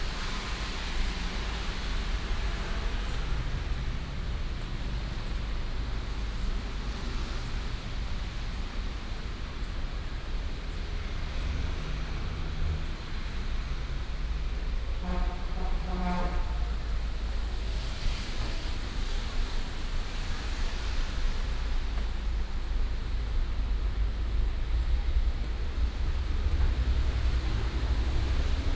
Zhonghua Rd., Taoyuan Dist., Taoyuan City - Walking in the rain on the road
Walking in the rain on the road, Traffic sound